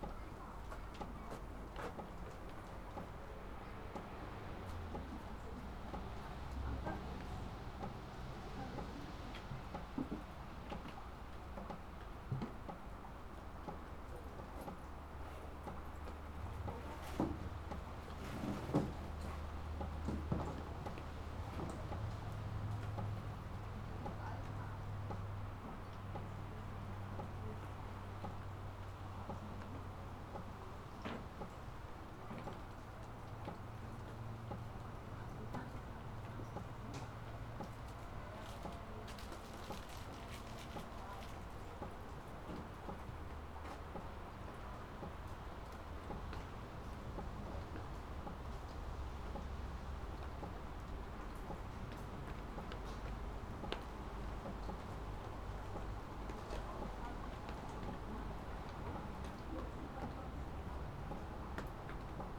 berlin, schwarzer kanal, inside the tent - berlin, schwarzer kanal, inside the tent
ambient people, tent, rain, construction